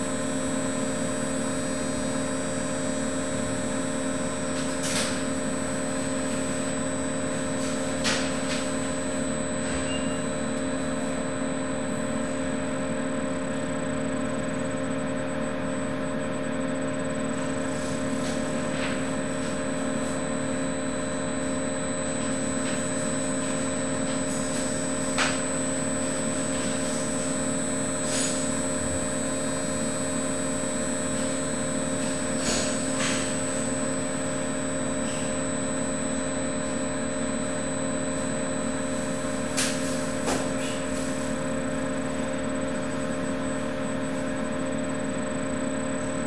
oscillating high-pitched sound of a electric generator that sends interferential current into treated area on patients body. also hum of its cooling fan and conversation of medical staff.